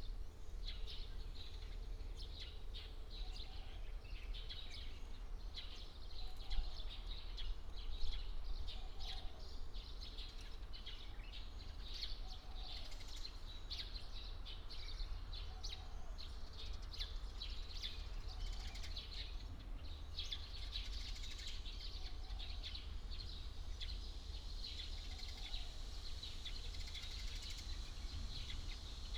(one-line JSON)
{"title": "三條崙海水浴場親水公園, Sihu Township - Various bird tweets", "date": "2018-05-08 10:42:00", "description": "Beside the woods, traffic Sound, Bird sound, Various bird tweets", "latitude": "23.66", "longitude": "120.15", "altitude": "5", "timezone": "Asia/Taipei"}